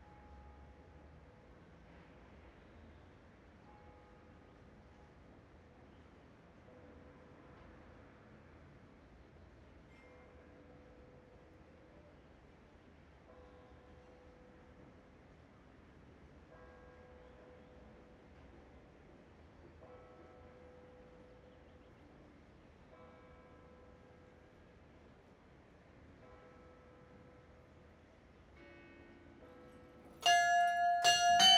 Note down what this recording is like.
Zuerst hören wir zwei Kirchenglocken 11 Uhr schlagen. Dann das Glockenspiel.